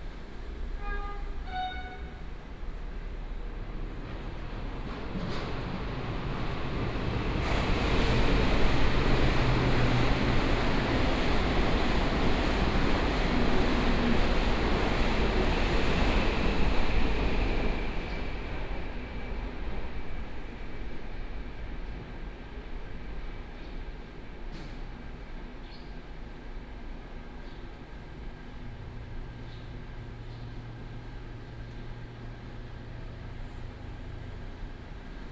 大林火車站, Chiayi County - In the station hall
In the station hall, lunar New Year, traffic sound, The train passed
Binaural recordings, Sony PCM D100+ Soundman OKM II